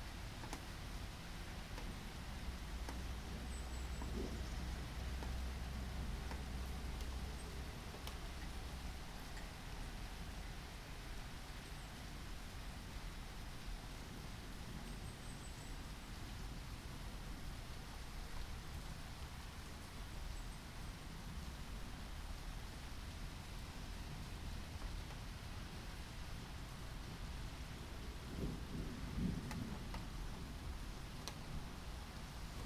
улица Правды, Витебск, Беларусь - field recording
the rain is almost over - zoom - H1
Витебская область, Беларусь, 17 August 2021